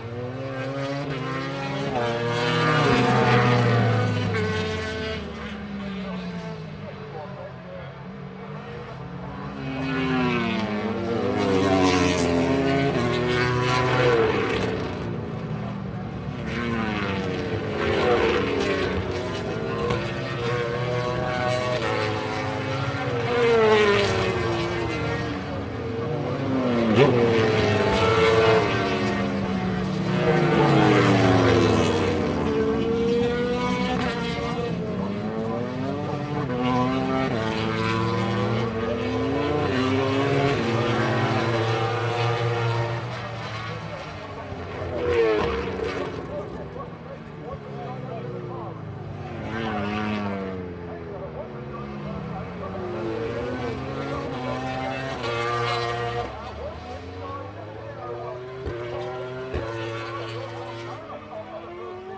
Donington Park Circuit, Derby, United Kingdom - British Motorcycle Grand Prix 2005 ... MotoGP FP3 (contd)
British Motorcycle Grand Prix 2005 ... MotoGP ... FP3(contd) ... Donington ... commentary ... one point stereo mic to minidisk ...
23 August